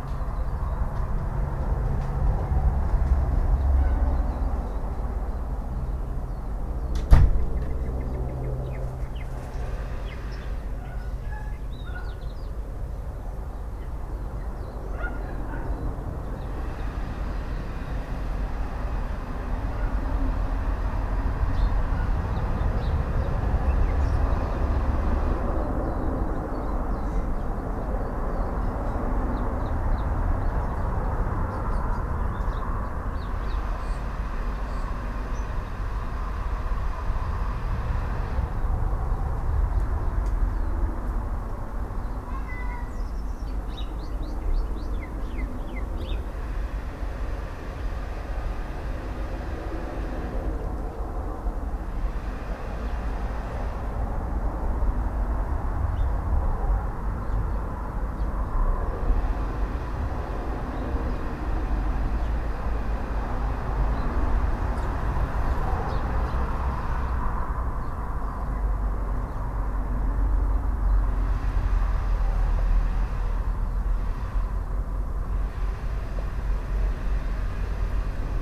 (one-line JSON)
{"title": "Gonville Avenue, Gonville, Whanganui, New Zealand - Garden birds and traffic", "date": "2020-04-23 12:30:00", "description": "The sounds of bird life, neighbours household life, and industrial traffic passing by an urban New Zealand garden that is close to a major industrial site access road. Sunny mild temperature day in late autumn that was 5 days before COVID-19 National alert level 4 drops to level 3. Under level 4 only essential businesses and essential travel outside your house were permitted. Whilst there has been a drop off in the number of vehicles using this particular road, it was slowly increasing as people return to workplaces or travelled during lunch breaks. Identifiable birds include Tui and Piwakawaka (NZ fantail). Traffic includes large stock trucks.", "latitude": "-39.95", "longitude": "175.04", "altitude": "10", "timezone": "Pacific/Auckland"}